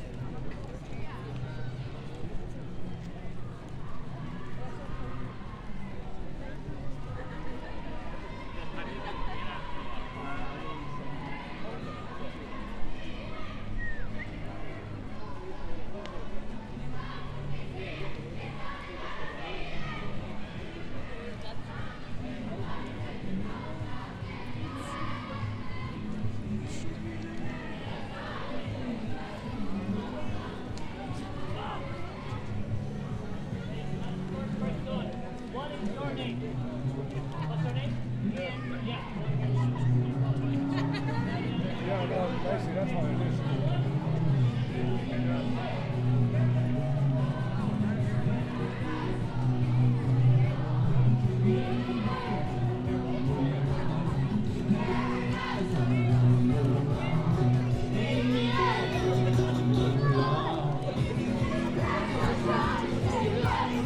Grafton Street, Dublin, Irlande - music
Music on Grafton Street with children singing. A moment of joy and happines
Recording devices : Sound Device Mix pre6 with 2 primo EM172 AB30cm setup
County Dublin, Leinster, Ireland, 16 June 2019, 17:17